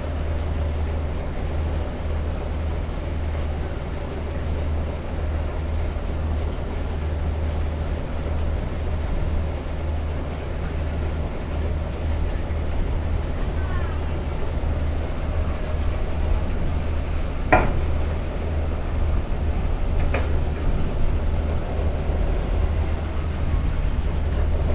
southern pier, Gdynia - onyx departure
departure of ship onyx to Hel. (sony d50)
Gdynia, Poland, 3 May